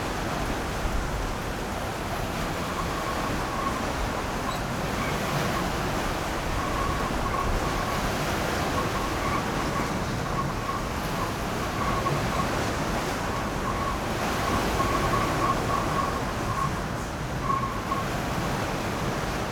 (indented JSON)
{
  "title": "Sec., Zhongzheng Rd., Tamsui Dist. - Wind and Trees",
  "date": "2012-04-04 07:30:00",
  "description": "Wind and Trees\nBinaural recordings\nSony PCM D50 + Soundman OKM II",
  "latitude": "25.18",
  "longitude": "121.43",
  "altitude": "5",
  "timezone": "Asia/Taipei"
}